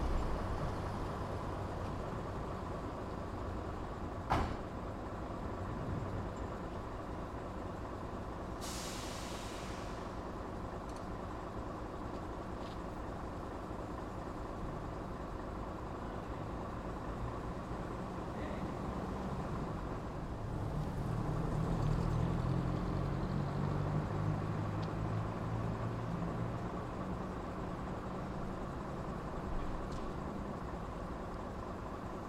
Stalos, Crete, morning bell
morning church bell